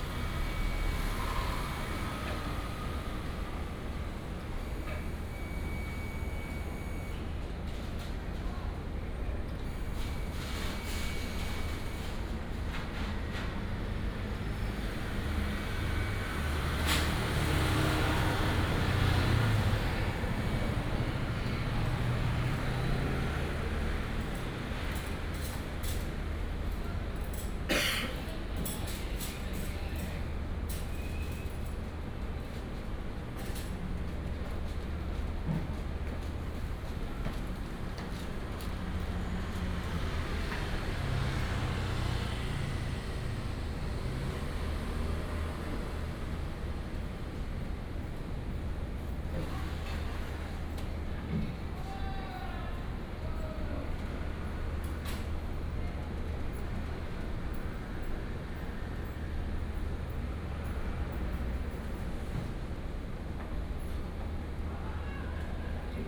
南台路197號, Sanmin Dist., Kaohsiung City - Hostel in a small alley
Hostel in a small alley, Traffic sound, Finish cleaning, Pumping motor noise
Kaohsiung City, Taiwan, May 2018